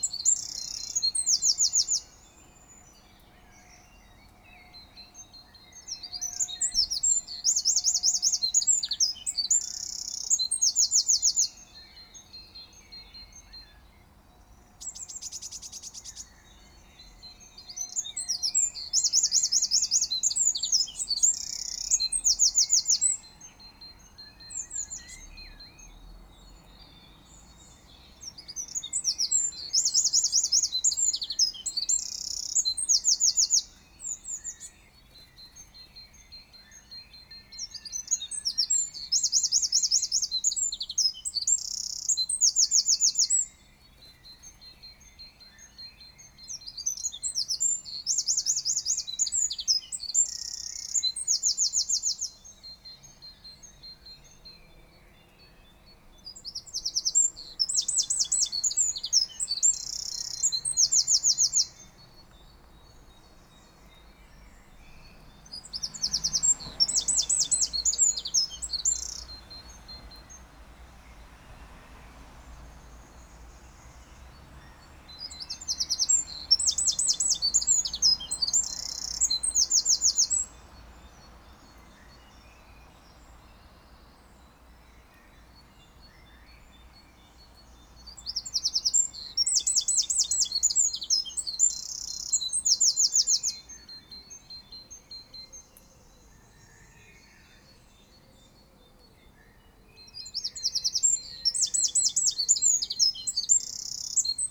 Mont-Saint-Guibert, Belgique - Birds on the early morning
This morning, birds were singing loud. It's spring and everybody of this small world is dredging. It was a beautiful song so I took the recorder before to go to work.
5 April 2016, ~06:00